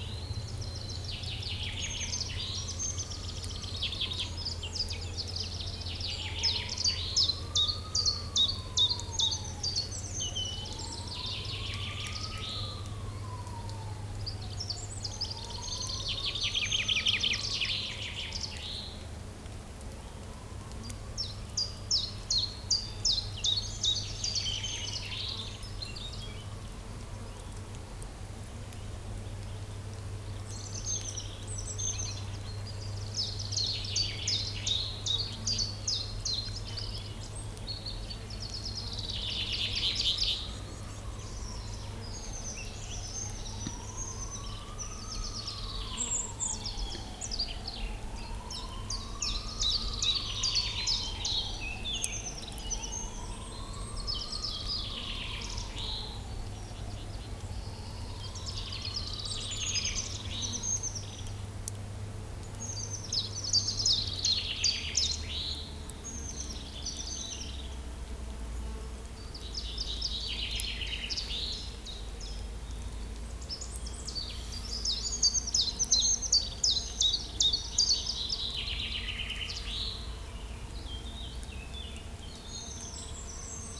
Genappe, Belgique - Common Chiffchaff
A very great sunny sunday, song of the common chiffchaff in the big pines.